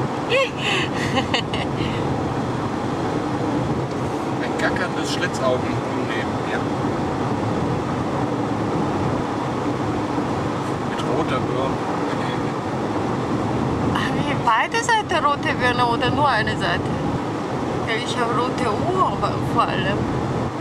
on the way to death valley, eskimo mit sonnenbrand
CA, USA